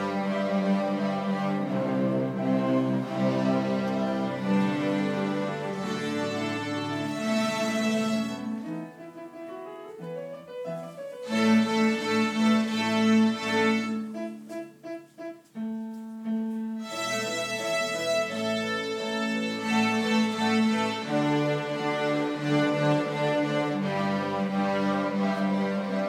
Wiesbaden, Leibniz Gymnasium
Tag der offenen Tür, 5.12.2009: Streicher AG